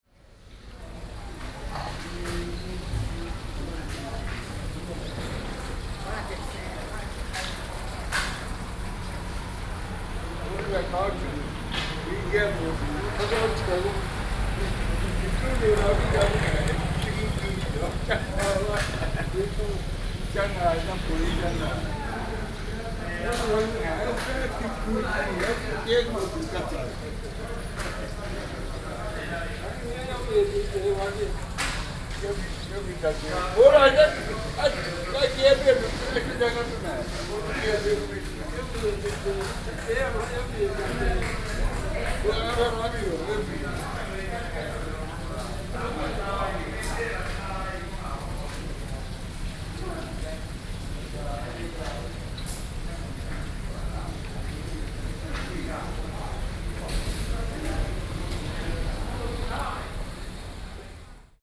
Zhongzheng District, Keelung - chatting and playing chess
A group of elderly people chatting and playing chess sitting on the side of the road, Sony PCM D50 + Soundman OKM II
基隆市 (Keelung City), 中華民國, 24 June 2012